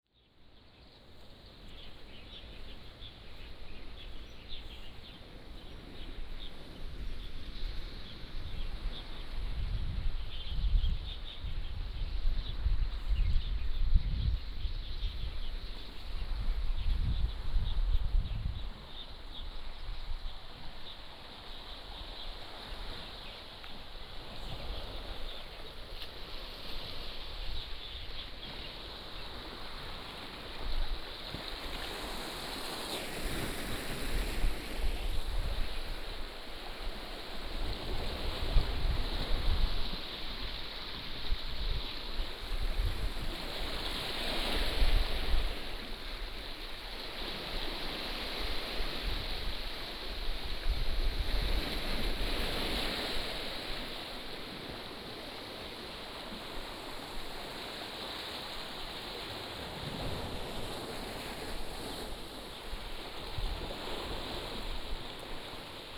Beigan Township, Taiwan - In the small marina
Birds singing, Sound of the waves, In the small marina